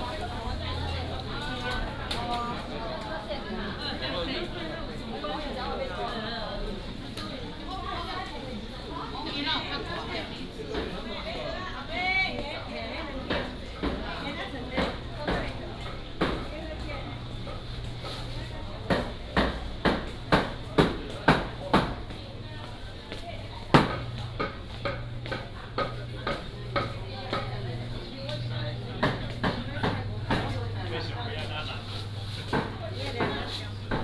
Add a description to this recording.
Old market, Walking in the traditional market